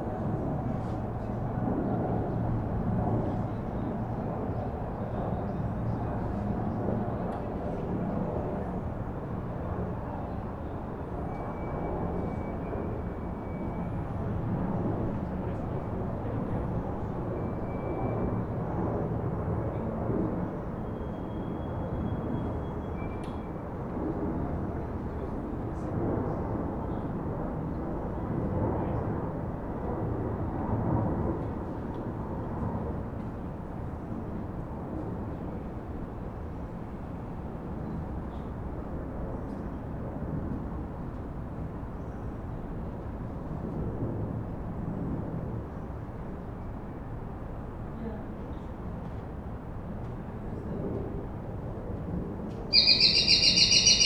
Lithuania, Vilnius, belfry of Sv. Jonas Church
there's a viewpoint on belfry of Sv. Jonas church (45 meters in height) to watch the city's panorama...and here's a soundscape from this height.
Vilnius district municipality, Lithuania, 29 June 2012